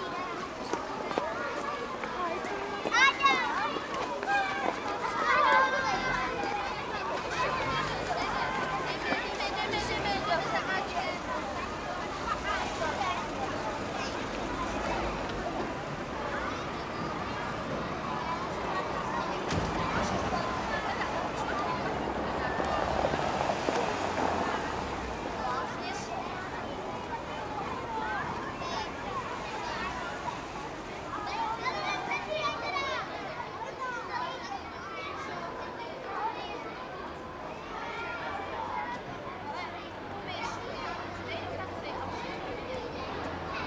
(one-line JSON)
{"title": "Prague, schoolkids crossing the street", "date": "2010-11-05 09:54:00", "description": "About 160 young children on a school excursion crossing the street with the help of their teachers. Queueing up, they block the crossroads, monumenting for the rights of all future pedestrians.", "latitude": "50.10", "longitude": "14.40", "altitude": "233", "timezone": "Europe/Prague"}